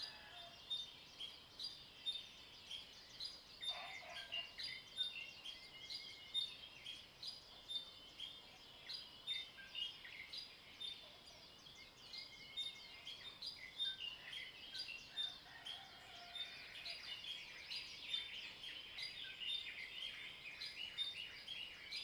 Bird calls, Early morning, Chicken sounds, Frogs sound
Zoom H2n MS+XY